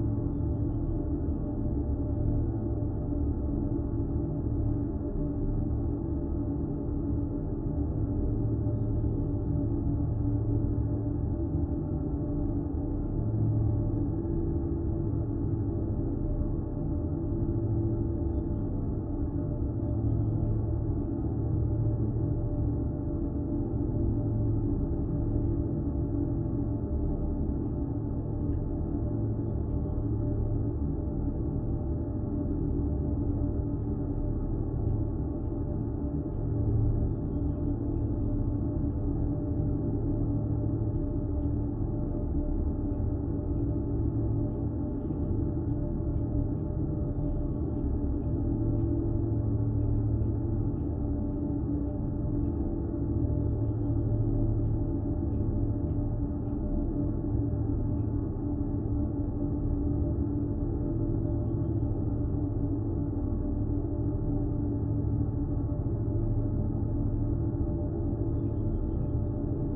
Königsheide, Berlin, Deutschland - well, Brunnen 14
Berlin Königsheide forest, one in a row of drinking water wells, now suspended
(Sony PCM D50, DIY contact microphones)